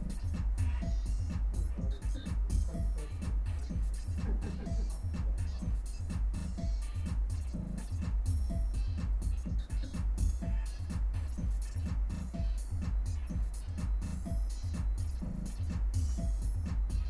carl stone playing ielectribe
愛知 豊田 ielectribe
16 June 2010, ~23:00